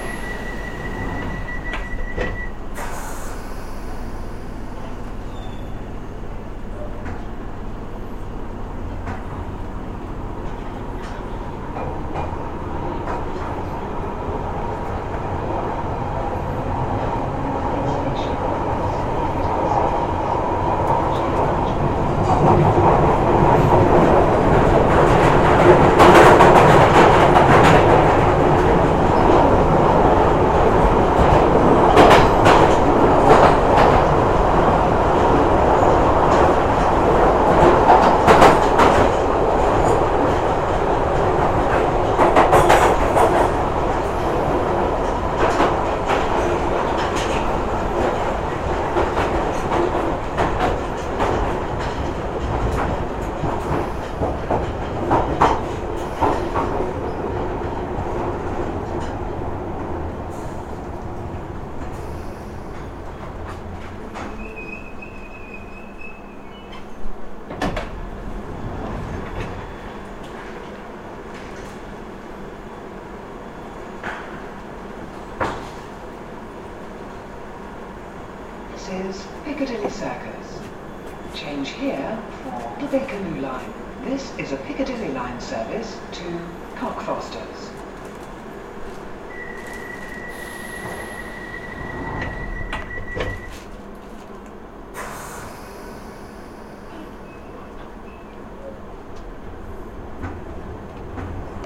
Underground to Piccadilly Circus
Underground train approaching Piccadilly Circus station